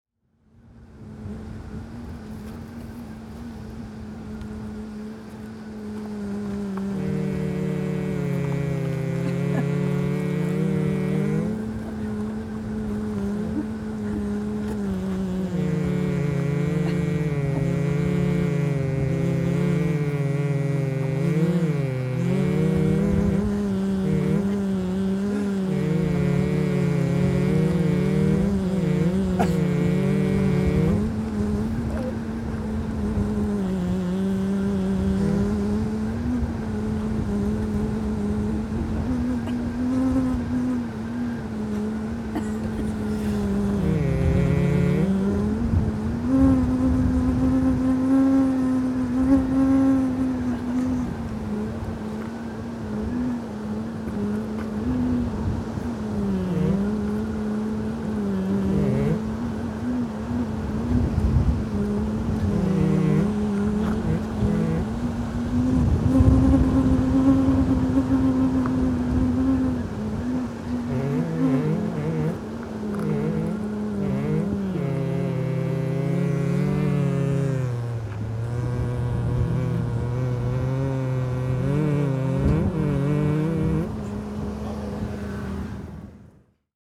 Farewell to Fogo Island Ferry Ramp Chip Stand - Humming billboard at chip stand parking lot
Humming billboard at chip stand parking lot during a windy afternoon. Witnessed / tickled by while waiting for the slowpoke ferry to Fogo Island with Priyanka, Robin, Chris, and Willem.